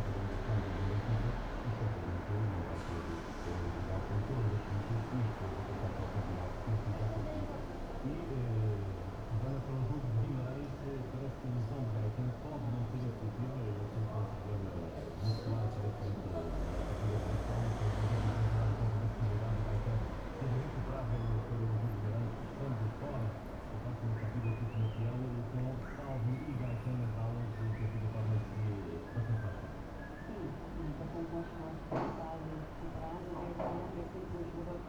Funchal, Largo do Phelps - taxi stop
one of the taxi drivers has a tv installed in his cab. the muffled conversation you can hear comes from the tv show, low pass filtered by the body of the car. at some point the driver opens the door, the customer gets in and they take off.